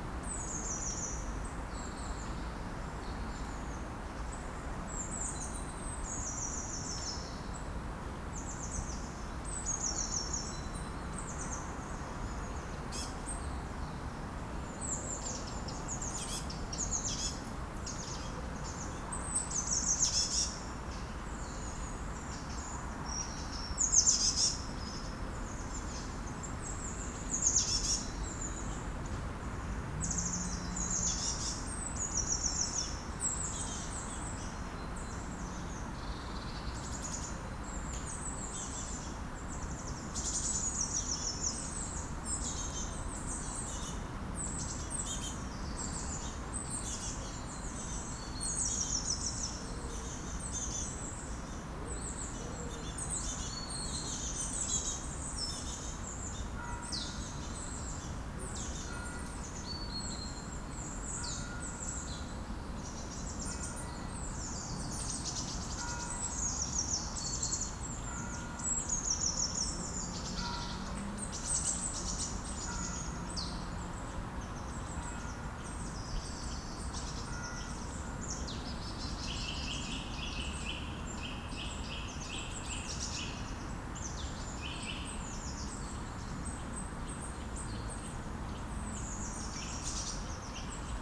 Maintenon, France - Quiet forest
Very quiet ambiance in a forest during a cold winter morning and two trains crossing.
24 December 2016, 09:57